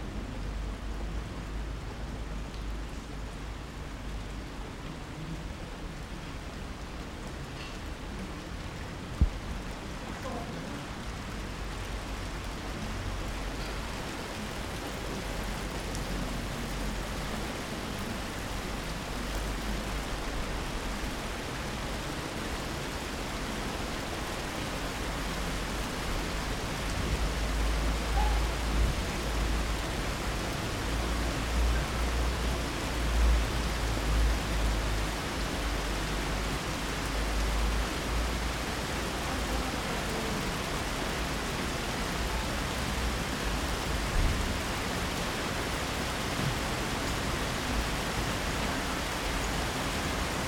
Pontinha, Portugal - Thunderstorm in a street alley
Recorded in a H6 Zoom recorder
NTG-3 Rhode mic with rhode suspension and windshield.
The end of a passing thunderstorm in an alley on the suburbs of Lisbon